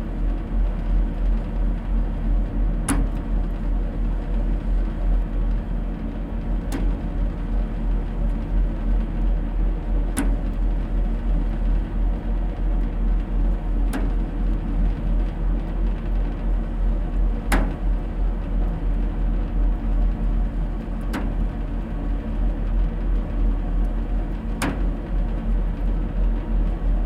Woodbridge, UK - agricultural hose reel irrigation system
water stressed irrigation of potatoes in Suffolk using agricultural hose reel computer programmable system pumping water via leaky hose connection points.
Marantz PMD620